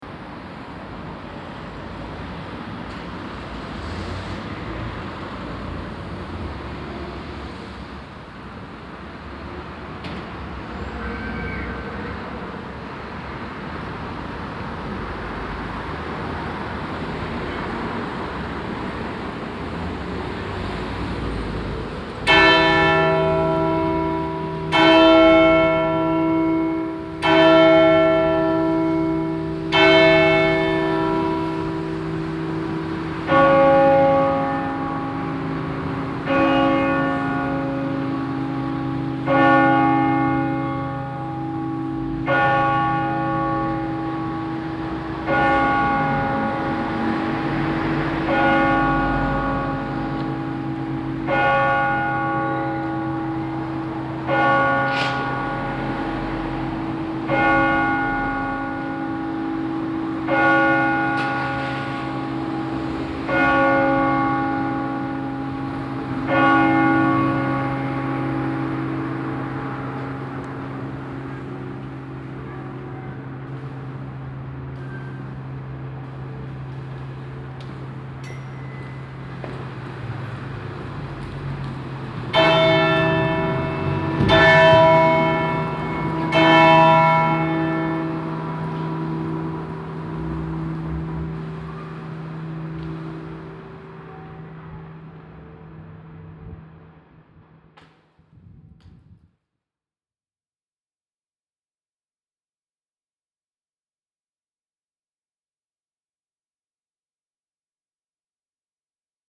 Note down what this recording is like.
An der St. Georg Kirche. Der Strassenverkehr und das Läuten der 12Uhr Glocken. At the St. Georg Church. The street traffic and the ringing of the 12o clock bells. Projekt - Stadtklang//: Hörorte - topographic field recordings and social ambiences